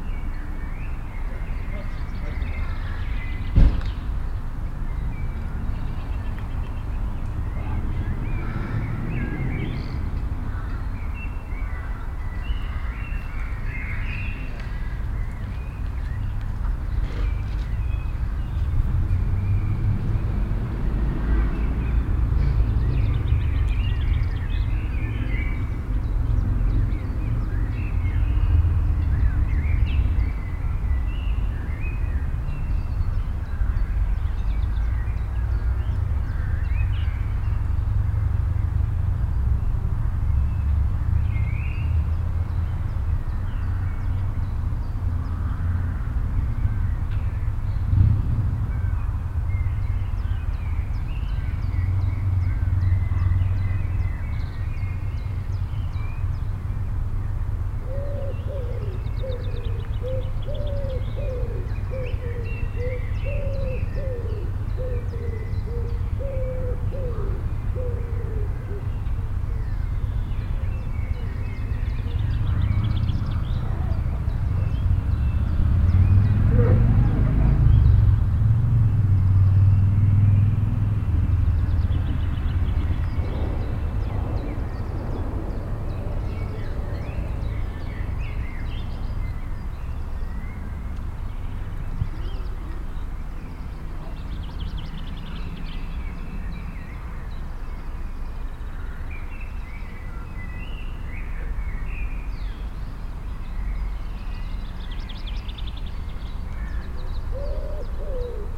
Spring, Sunday, late afternoon in an urban residential district. A plane, birds, cars, a motorcycle, a few people in a distance. Binaural recording, Soundman OKM II Klassik microphone with A3-XLR adapter and windshield, Zoom F4 recorder.
Kronshagen, Germany, 7 May